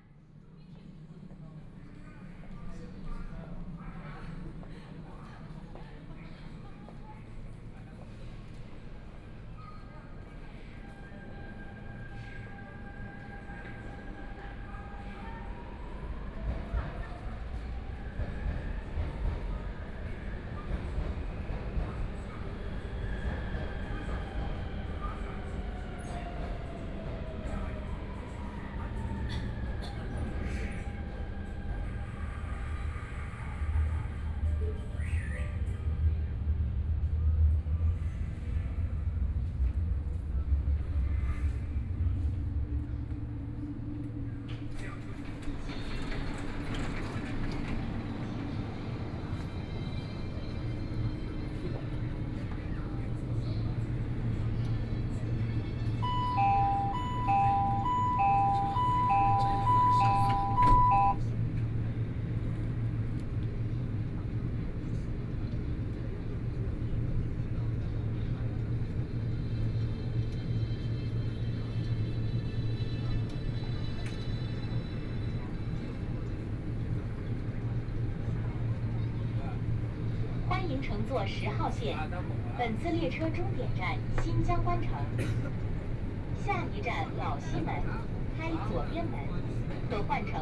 Huangpu District, Shanghai - Line 10 (Shanghai Metro)
from Xintiandi Station to Yuyuan Garden Station, Binaural recording, Zoom H6+ Soundman OKM II ( SoundMap20131126- 34)
Huangpu, Shanghai, China, 26 November 2013, 18:14